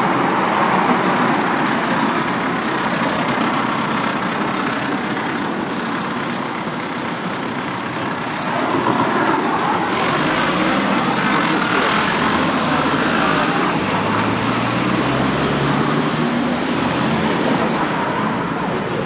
Campo Grande, Lisboa, Portugal - To the Lusófona University
Recording from a Iphone at Lusófona University.